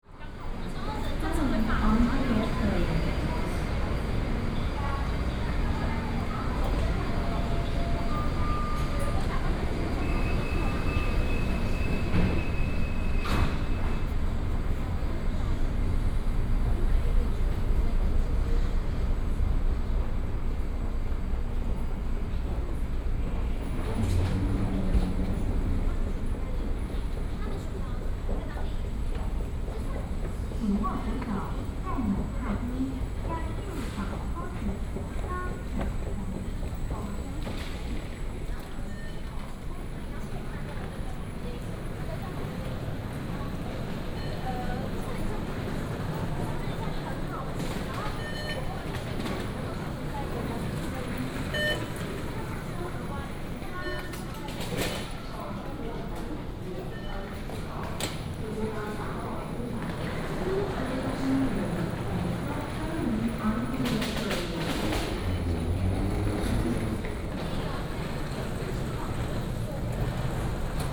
Huanbei Station, Zhongli, Taoyuan City - walking at MRT station
Walking at MRT station, from the station platform, Through the hall, To export direction
Binaural recordings, Sony PCM D100+ Soundman OKM II
Taoyuan City, Taiwan, February 2018